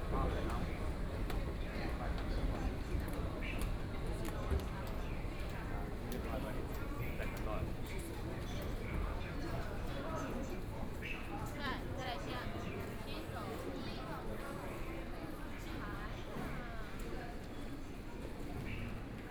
Henan Road, Shanghai - Line 10 (Shanghai Metro)
from Laoximen Station to Yuyuan Garden Station, Binaural recording, Zoom H6+ Soundman OKM II
Shanghai, China, November 2013